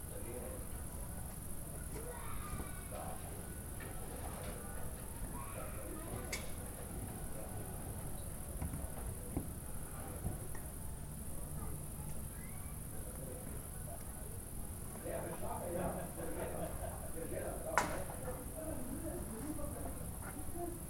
one minute for this corner: Za tremi ribniki and Sprehajalna pot
Maribor, Slovenia, 25 August 2012, 20:51